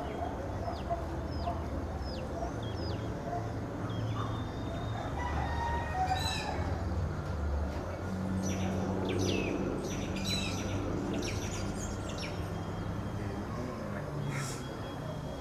{"title": "San Jacinto de Buena Fe, Ecuador - Buena Fe in the morning.", "date": "2016-02-29 07:00:00", "description": "Same place, different day and hour.", "latitude": "-0.89", "longitude": "-79.49", "altitude": "104", "timezone": "America/Guayaquil"}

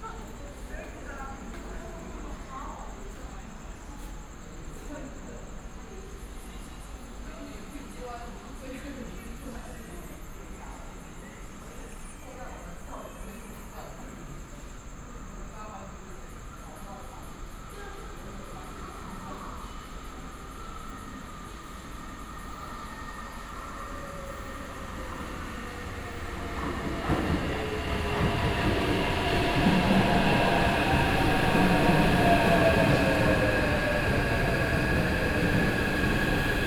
{"title": "Hongshulin Station, New Taipei City - In the station platform", "date": "2012-06-18 21:54:00", "description": "In the station platform, Trains arrive\nSony PCM D50", "latitude": "25.15", "longitude": "121.46", "altitude": "12", "timezone": "Asia/Taipei"}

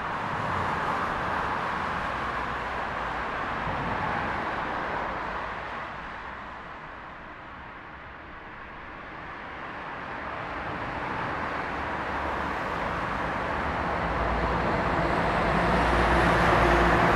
{"title": "Rzgowska/Śląska, Łódź, Polska - Under the overpass", "date": "2012-02-09 21:26:00", "description": "Under overpass, Rzgowska/Śląska, this place is like a tunnel.\nFour car and two tramway lanes.", "latitude": "51.73", "longitude": "19.48", "altitude": "195", "timezone": "Europe/Warsaw"}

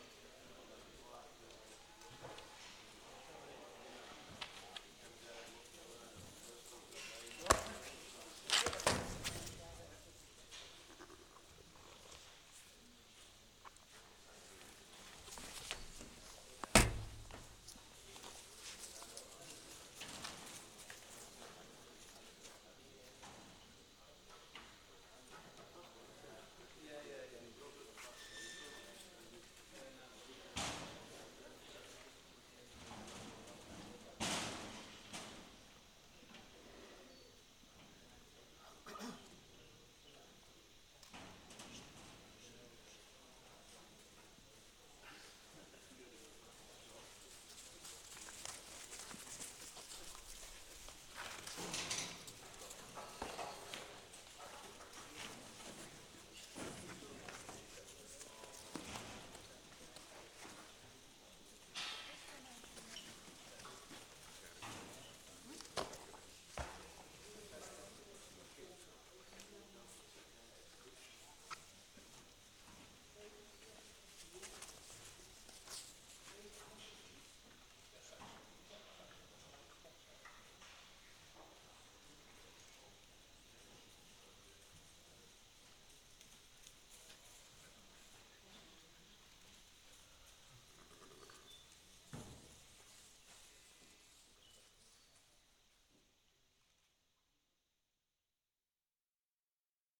{"title": "Ram Auction, Shetland Marts, Shetland Islands, UK - Quiet rams in the auction ring", "date": "2013-10-12 11:00:00", "description": "Before being auctioned off, the rams are all inspected for The Shetland Flock Book. This involves bringing all the rams into the show ring and assessing their breed characteristics, seeing how they stand, their confirmation, fleece quality, teeth etc. The rams are managed by a few crofters who herd them into the ring, and they sometimes butt the metal walls of that ring with their amazing horns.", "latitude": "60.16", "longitude": "-1.18", "altitude": "16", "timezone": "Europe/London"}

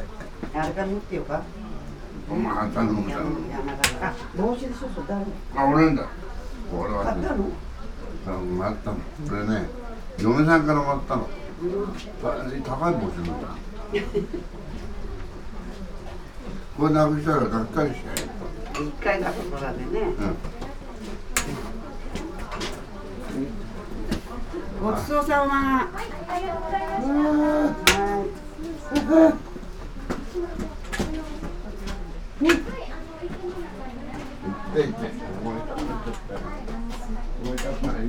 {"title": "Taizoin garden, tea house, Kyoto - old spirits", "date": "2014-11-04 12:11:00", "latitude": "35.02", "longitude": "135.72", "altitude": "53", "timezone": "Asia/Tokyo"}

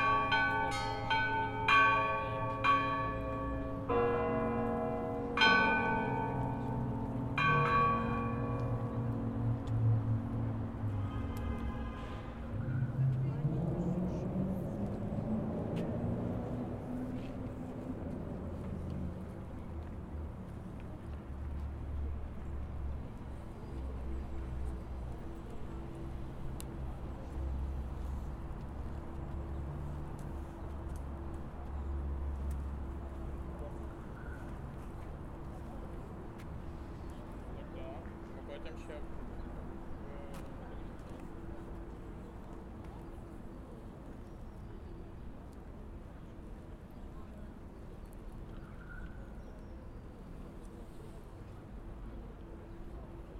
{"title": "St.Volodymyrs Cathedral, Tarasa Shevchenko Blvd, Kyiv, Ukraine - Easter Sunday Bells", "date": "2018-04-08 14:00:00", "description": "zoom recording of bells as Orthodox families line for blessing outside St.Volodomyr's on Easter Sunday", "latitude": "50.44", "longitude": "30.51", "altitude": "183", "timezone": "Europe/Kiev"}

